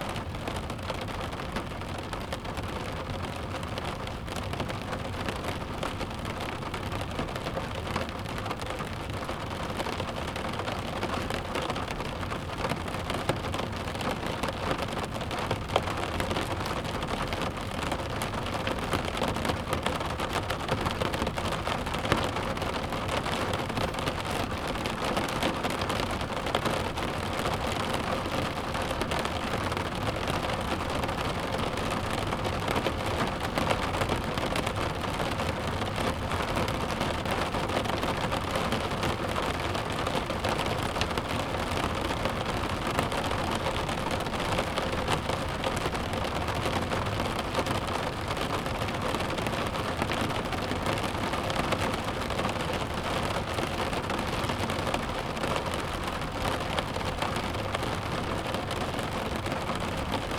Praia do Pisão - Santa Cruz - Heavy rain at the beach
Heavy rain recorded inside the car parked close the beach.
Recorded with a Tascam DR-40X internal mics on AB.